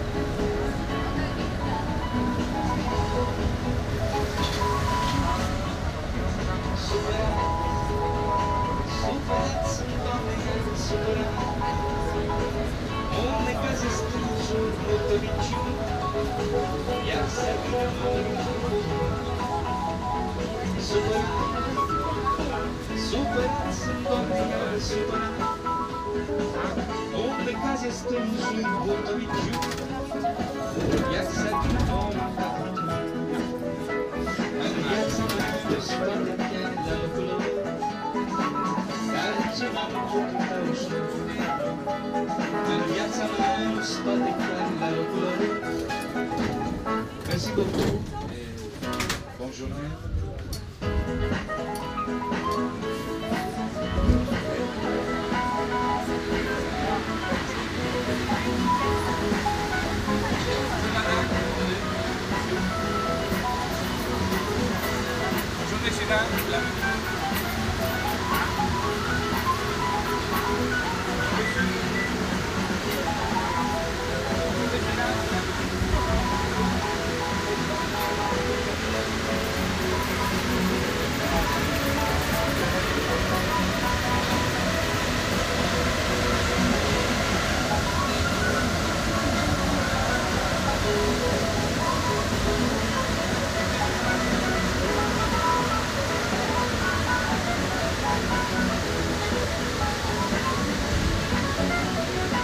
The whole metro trip from Raspail to Trocadéro, Paris. Note the terrible singer around 920. Binaural recording.